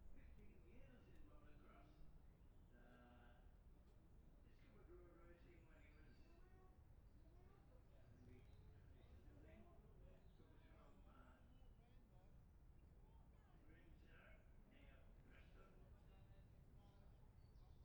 Jacksons Ln, Scarborough, UK - olivers mount road racing ... 2021 ...
bob smith spring cup ... 600cc group B practice ... luhd pm-01 mics to zoom h5 ...